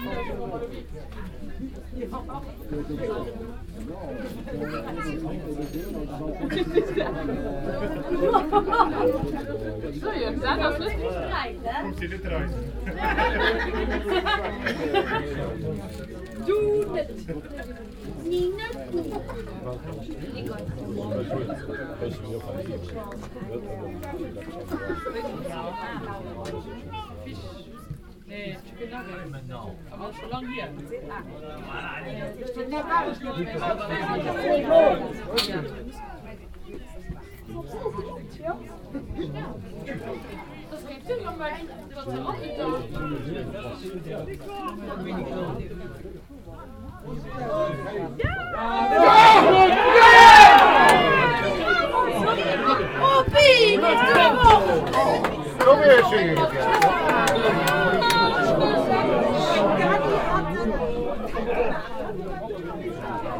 hosingen, soccer field
At the villages soccer field during the second half of a game with two local football teams.. The sound of the referee pipe, ball attacks, a foul and conversations of the local fans and visitors.
Hosingen, Fußballfeld
Beim Fußballfeld des Ortes während der zweiten Halbzeit eines Spiels mit zwei regionalen Fußballmannschaften. Das Geräusch der Pfeife des Schiedsrichters, Ballangriffe, ein Foul begleitet vom Unterhaltungen und Kommentaren der lokalen Fans und Zuschauer.
Hosingen, terrain de football
Sur le terrain de football du village durant la seconde mi-temps d’un match entre deux équipes locales. On entend le sifflet de l’arbitre, des attaques de balles, une faute et les conversations des supporters locaux et des visiteur
Hosingen, Luxembourg, 12 September 2011